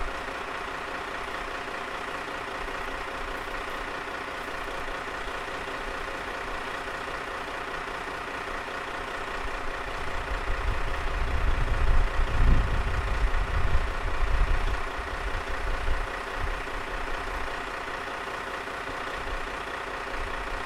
Dworcowa, Gorzów Wielkopolski, Polska - SU42 train after modernization.
SU42 train after modernization standing on the platform. The recording comes from a sound walk around the Zawarcie district. Sound captured with ZOOM H1.
lubuskie, RP, 13 August 2019